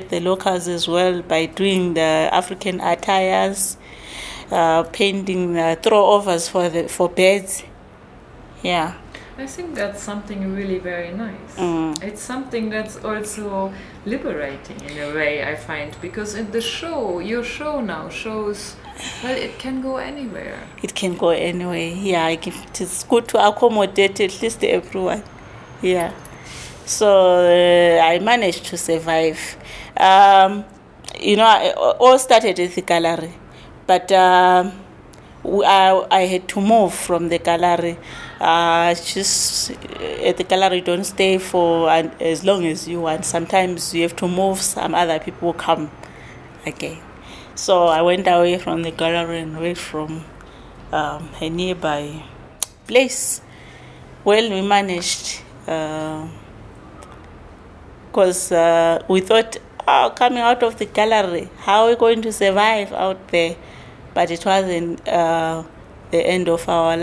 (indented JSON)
{
  "title": "NGZ back-yard, Makokoba, Bulawayo, Zimbabwe - Nonhlanhla - you got your hands you can survive…",
  "date": "2012-10-26 16:37:00",
  "description": "With nineteen, Nonhlanhla won an award in the Anglo-American Arts Exhibition. Respect and recognition brought customers to her studio; she was one of the few women being fortunate making a living of her work. And even through difficult times, she always managed to support herself and her family through her artwork.",
  "latitude": "-20.15",
  "longitude": "28.58",
  "altitude": "1351",
  "timezone": "Africa/Harare"
}